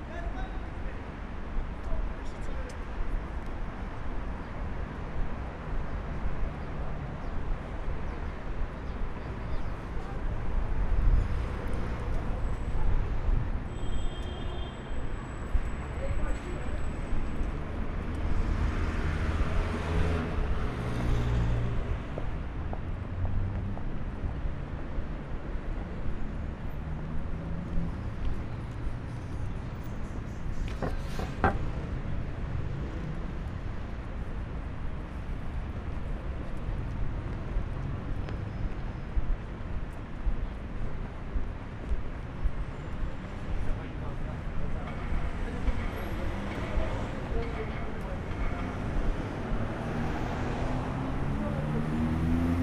Piata Uniri, walking the area
Walking around Piata Uniri, traffic, construction works, people
Romania, 22 November 2011